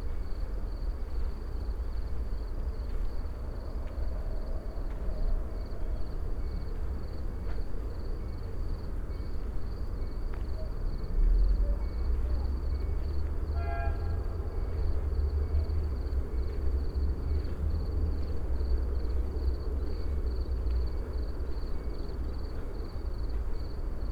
Poznan, Morasko, field road - evening chorus
(binaural) evening walk along a flied road on the outskirts of Poznan. crickets on boths sides of the road. the noisy drone comes from a heavy weight train. even though it was late evening the local traffic was still strong and making a lot of noise.
Poznań, Poland, 29 May 2015